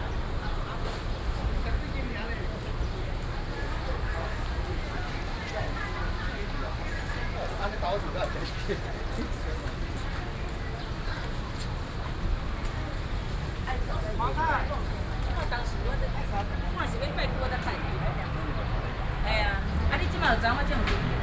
Beitou, Taipei - Traditional Market
walking in the Traditional Market, Sony PCM D50 + Soundman OKM II